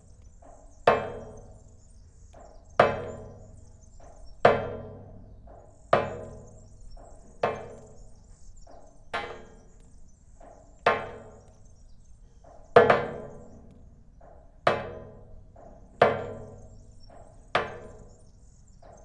Utena, Lithuania. drops in rainwater pipe
a drizzle is over and drops of water falling in a long rainwater pipe. recorded with two omnis and contact mic
9 July 2018, 18:50